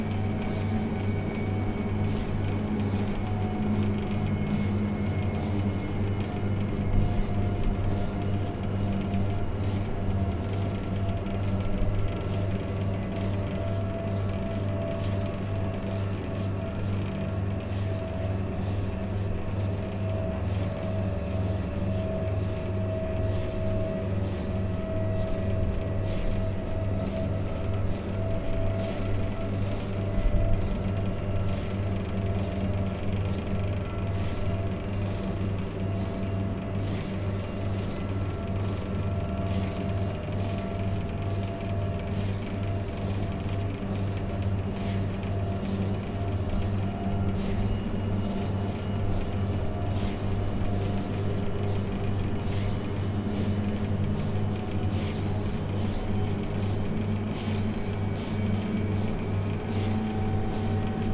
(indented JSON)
{
  "title": "Sonnagh Old, Co. Galway, Ireland - Wind Turbine, Sonnagh Old Windfarm",
  "date": "2021-01-01 13:05:00",
  "description": "Microphone held up to bottom of a wind turbine on a windy and cold New Year's Day.\nAudio Technica AT2022 onto a Zoom H5.",
  "latitude": "53.13",
  "longitude": "-8.64",
  "altitude": "317",
  "timezone": "Europe/Dublin"
}